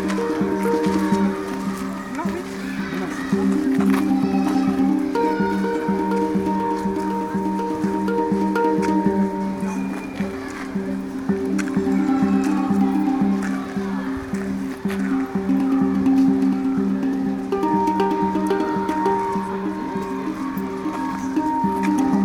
Park Güell, Barcelona
a hand drum in Park Güell